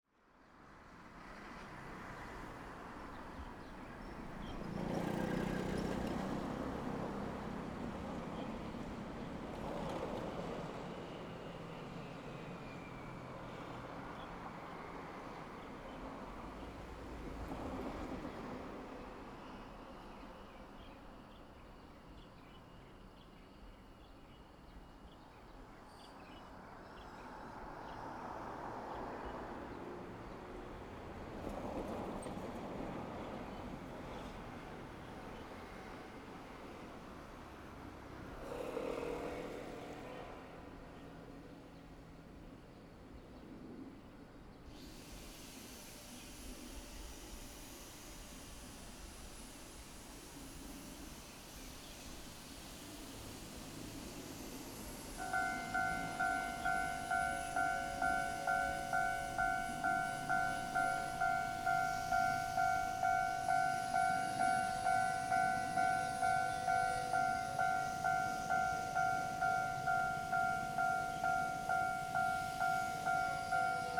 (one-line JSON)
{"title": "學進路, 五結鄉二結村 - Near the railroad tracks", "date": "2014-07-31 18:12:00", "description": "At railroad crossing, Near the railroad tracks, Traffic Sound, Trains traveling through\nZoom H6 MS+ Rode NT4", "latitude": "24.70", "longitude": "121.77", "altitude": "9", "timezone": "Asia/Taipei"}